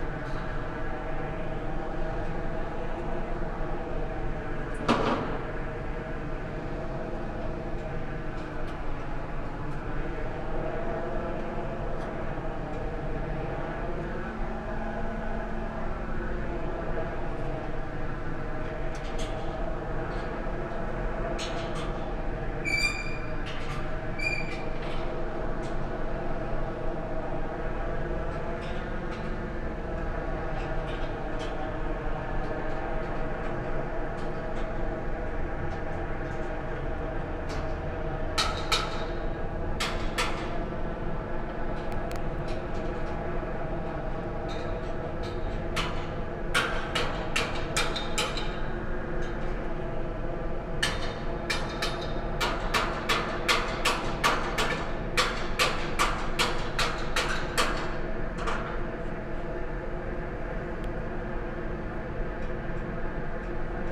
Maribor, Tezno, industrial area - exhaust and workers
Tezno industrial area, no one on the streets here. noisy exhaust on a yellow building, workers on a scaffold. the exhaust produces standing waves, a slight change of position changes the sound at that location too.
(SD702, AT BP4025)